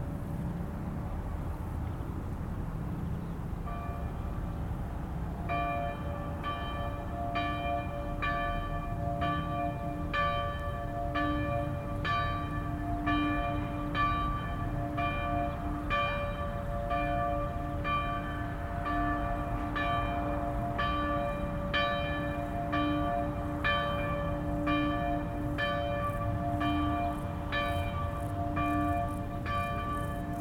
Rte du Port, Bourdeau, France - Cloche de Bourdeau
Sonnerie de la cloche de la chapelle de Bourdeau à midi. Beaucoup de bruit de circulation automobile.
19 July 2020, 12:00